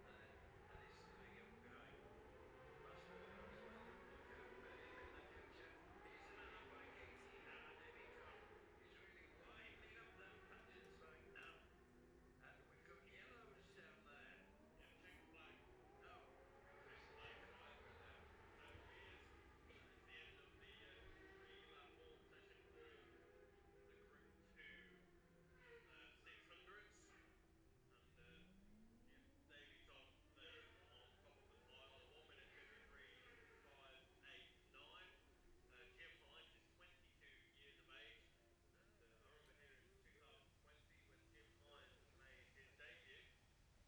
Jacksons Ln, Scarborough, UK - gold cup 2022 ... 600 practice ...
the steve henshaw gold cup 2022 ... 600 group two practice ... dpa 4060s clipped to bag to zoom h5 ...